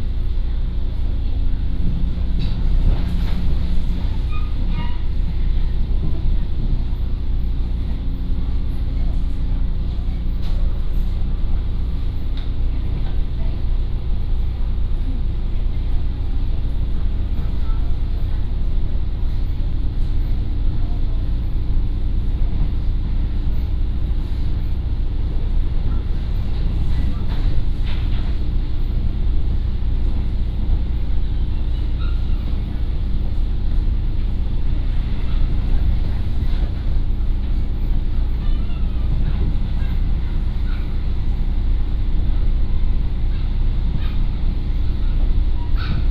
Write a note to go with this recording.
From Bao'an Station to Tainan Station